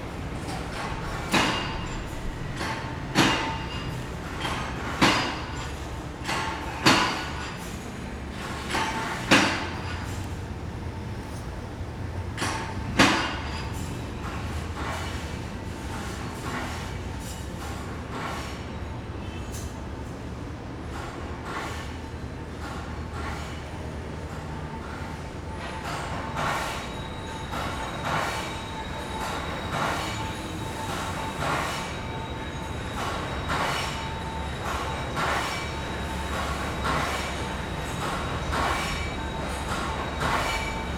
{"title": "Ln., Fuying Rd., Xinzhuang Dist., New Taipei City - the voice of the factory", "date": "2012-01-09 11:44:00", "description": "the voice of the factory, Traffic Sound\nZoom H4n +Rode NT4", "latitude": "25.03", "longitude": "121.43", "altitude": "12", "timezone": "Asia/Taipei"}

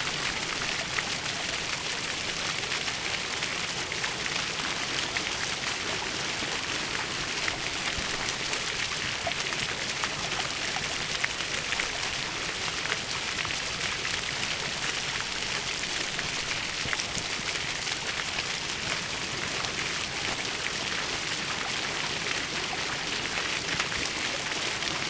{"title": "göhren, strandpromenade: brunnen - the city, the country & me: fountain", "date": "2010-10-02 15:40:00", "description": "the city, the country & me: october 2, 2010", "latitude": "54.35", "longitude": "13.74", "altitude": "4", "timezone": "Europe/Berlin"}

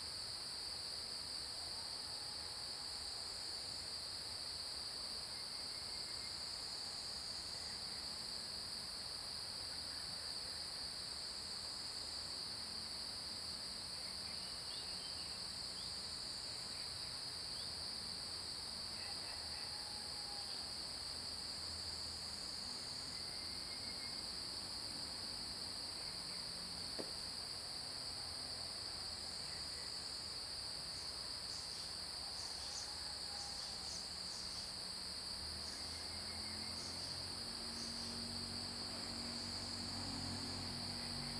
{"title": "種瓜路4-2號, Puli Township - Early morning", "date": "2015-09-03 05:49:00", "description": "Crowing sounds, Bird calls, Cicada sounds, Early morning\nZoom H2n MS+XY", "latitude": "23.94", "longitude": "120.92", "altitude": "495", "timezone": "Asia/Taipei"}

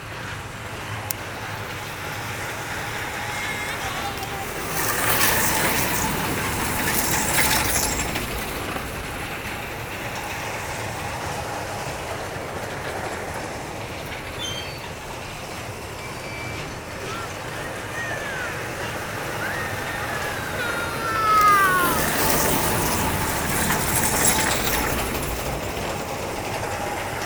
{"title": "Gyumri, Arménie - Attraction park", "date": "2018-09-09 17:30:00", "description": "Children, playing in an attraction park. Bumper cars and roller coaster. Armenian people is so kind that in the bumper cars area, they don't cause accidents ! This park so ramshackle, welcoming very poor people, that I was near to cry.", "latitude": "40.79", "longitude": "43.84", "altitude": "1533", "timezone": "Asia/Yerevan"}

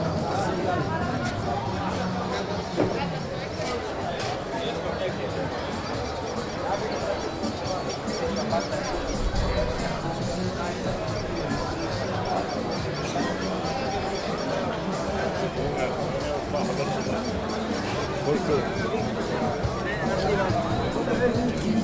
{"title": "Mahane Yehuda Market - Weekend at Mahane Yehuda Market", "date": "2021-11-12 11:00:00", "description": "Friday morning at Mahane Yehuda Market. Busiest time of the week. Locals as well as tourists are spending time at restaurants and buying fresh products. Peddlers are enthusiastic to sell their goods before the market is closed for Shabbat, shouting over special prices. Chabad followers are offering the men crowd to put Tefillin. Loud music is coming out the stoles and cafes. A panhandler is begging people for some money. A chick is basking singing songs.", "latitude": "31.79", "longitude": "35.21", "altitude": "815", "timezone": "Asia/Jerusalem"}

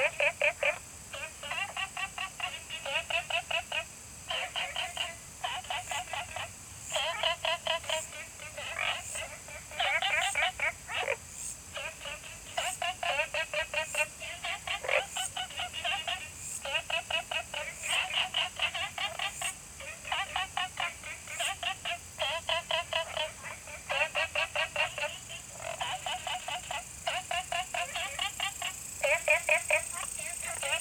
{"title": "青蛙ㄚ婆ㄟ家民宿, Puli Township, Nantou County - Frog calls and Insect sounds", "date": "2015-09-03 20:36:00", "description": "In the bush, Frog calls, Insect sounds\nZoom H2n MS+XY", "latitude": "23.94", "longitude": "120.94", "altitude": "463", "timezone": "Asia/Taipei"}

